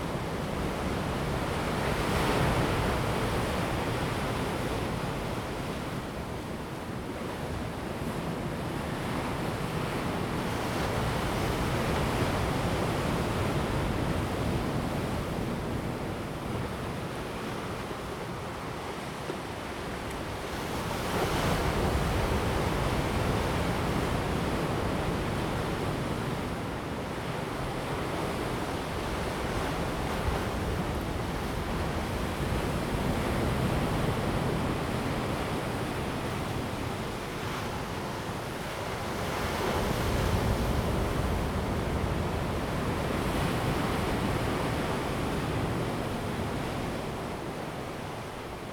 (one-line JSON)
{"title": "台26線, Manzhou Township, Pingtung County - the sea washes the shore", "date": "2018-04-23 11:36:00", "description": "On the coast, wind, Sound of the waves, the sea washes the shore\nZoom H2n MS+XY", "latitude": "22.19", "longitude": "120.89", "altitude": "5", "timezone": "Asia/Taipei"}